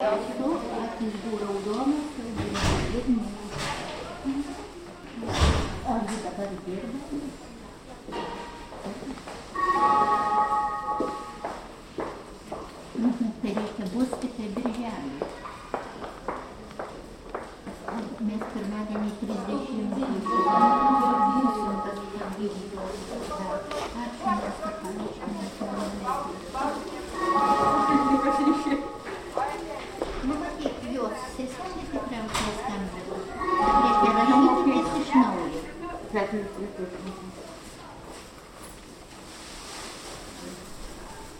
health care center, reception, Karoliniskes, Vilnius, Lithuania
healthcare center, poliklinika, Lietuva, Vilnius, Lithuania